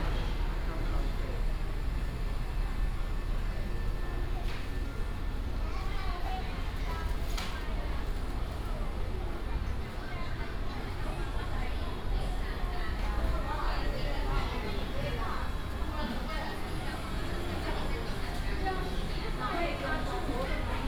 中正示範市場, Hsinchu City - New market
in the new market, vendors peddling, Combined with shopping malls and markets
Hsinchu City, Taiwan, 26 August 2017